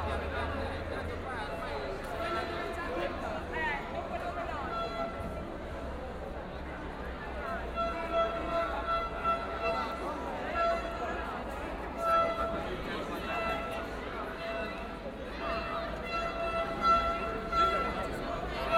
The crowd is not cheering that much anymore, but still signalhorns are structuring the sound of the mass as well as chants of the song Seven Nation Army by White Stripes.
Aarau, Switzerland